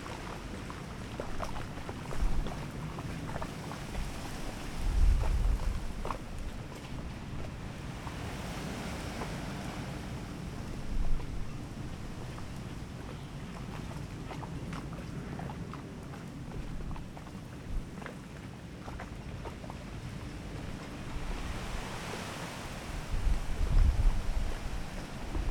woudsend: midstrjitte - the city, the country & me: wooded area at the ship canal
stormy day (force 7-8), trees swaying in the wind, water laps against the bank
city, the country & me: june 13, 2013